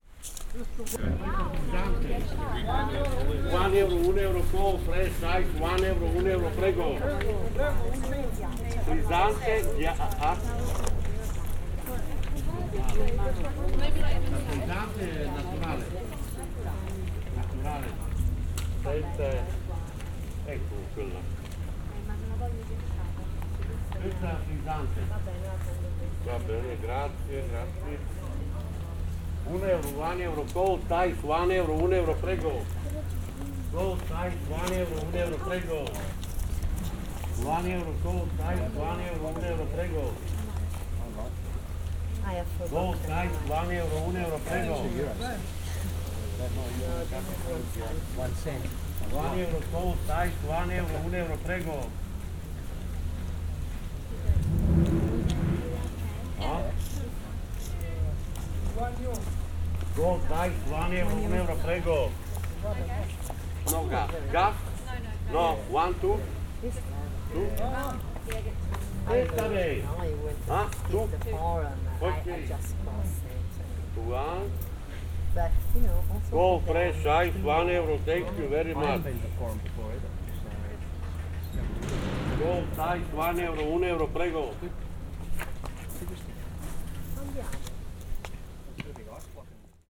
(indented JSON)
{
  "title": "Rione X Campitelli, Roma, Włochy - Water seller",
  "date": "2015-06-30 14:48:00",
  "description": "Men sells bottled mineral water to the people who go out of the Forum Romanum",
  "latitude": "41.89",
  "longitude": "12.49",
  "altitude": "34",
  "timezone": "Europe/Rome"
}